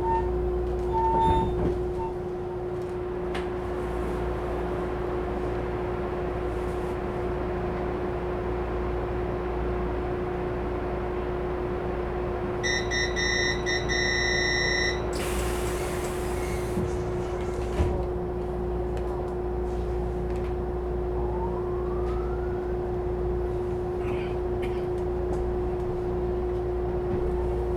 Luzhu, Kaohsiung - inside the Trains
from Zhongzhou Station to Luzhu Station, Trains traveling, Train crossing, Train broadcast message, Sony ECM-MS907, Sony Hi-MD MZ-RH1
路竹區 (Lujhu), 高雄市 (Kaohsiung City), 中華民國, 29 March 2012, ~15:00